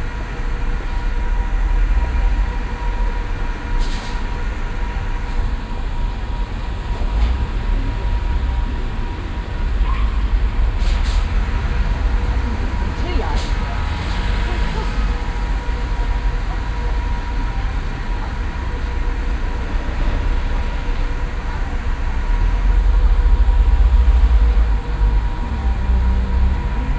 cologne, neue langgasse, teeladen, lüftung
lüftung eines teeladens an der strassenseite
soundmap nrw: social ambiences/ listen to the people - in & outdoor nearfield recordings